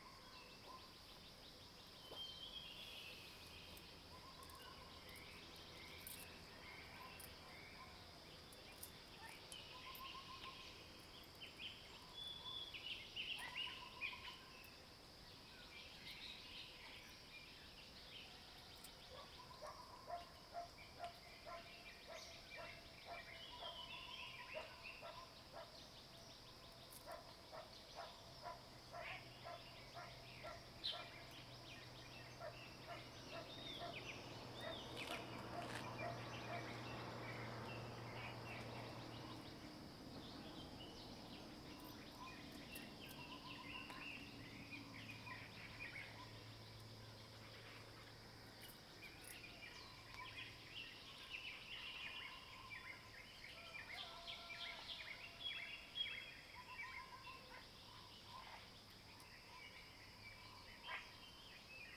TaoMi 綠屋民宿, Nantou County - Early morning
Crowing sounds, Bird calls, Frogs chirping, Early morning
Zoom H2n MS+XY
29 April, ~06:00